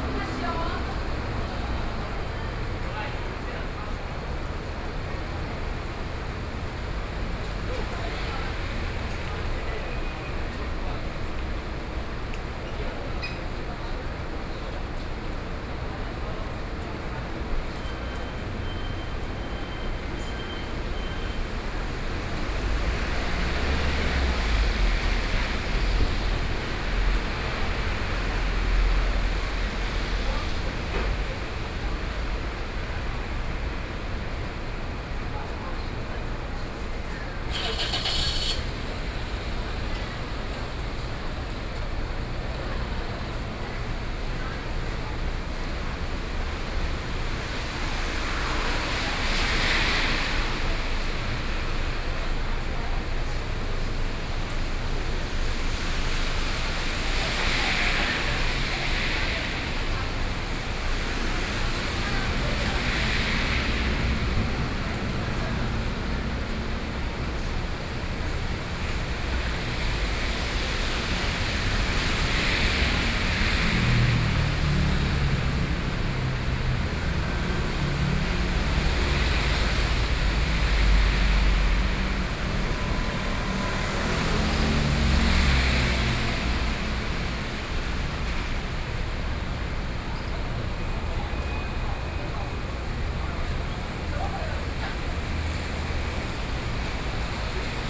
Yangmei - rainy day
Standing on the roadside, In front of the beverage shop, Traffic Noise, Sony PCM D50 + Soundman OKM II
Dàchéng Road, 楊梅鎮 Taoyuan County, Taiwan